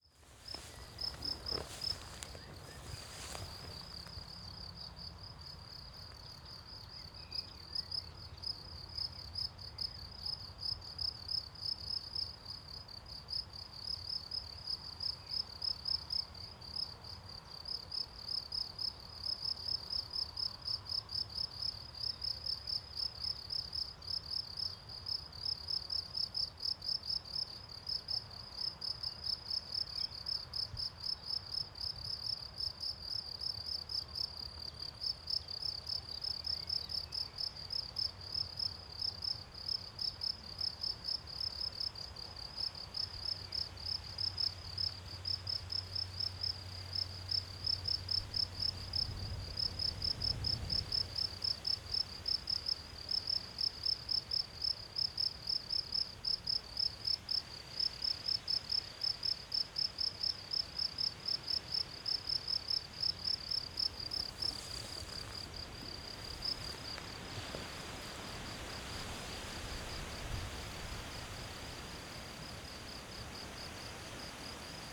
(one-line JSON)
{"title": "Maribor, Piramida - meadow, crickets, wind", "date": "2012-05-27 12:45:00", "description": "crickets and wind in trees on Piramida hill", "latitude": "46.57", "longitude": "15.65", "altitude": "364", "timezone": "Europe/Ljubljana"}